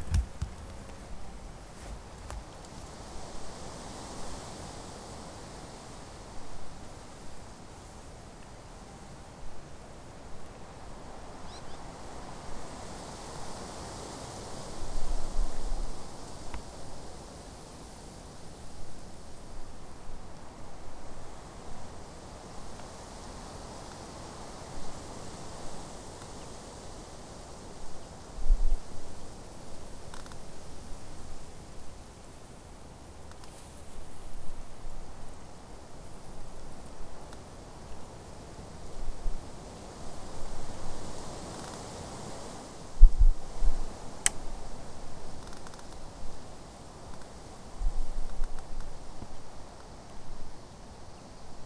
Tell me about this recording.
Wind in the grass at the shore of the rapids Husån where it flows out in the lake Inre Lemesjön. Cracking sounds from the nearby birch and some bird.In 2 km distance the bells of Trehörningsjö kyrka is playing. The recording was taking place during the soundwalk on the World Listening Day, 18th july 2010 - "Ljudvandring i Trehörningsjö".